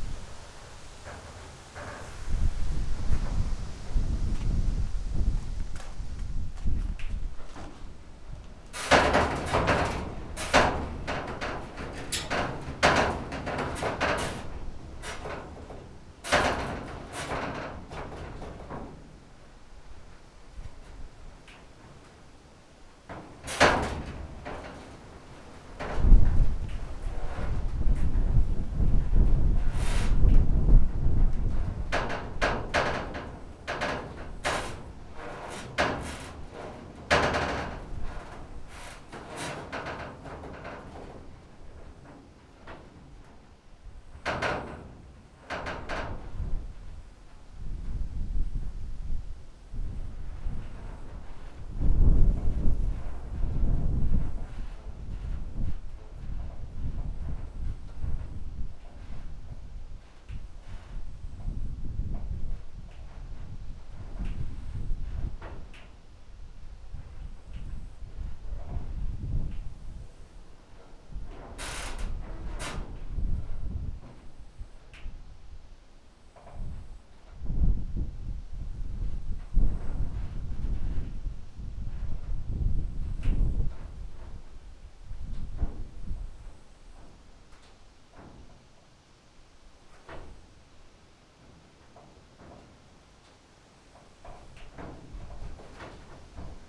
Sasino, along Chelst stream - containers
an abandoned, wrecked cargo container standing on the field. bent pieces of its body groaning in the wind. the whole structure overloaded by the gusts of wind tightens and weeps.
Poland